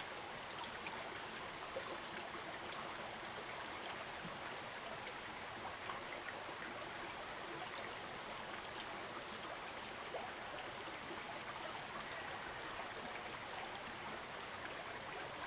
種瓜坑溪, 成功里 Puli Township - Small streams
Brook, In the river, Small streams
Zoom H2n MS+XY
Nantou County, Taiwan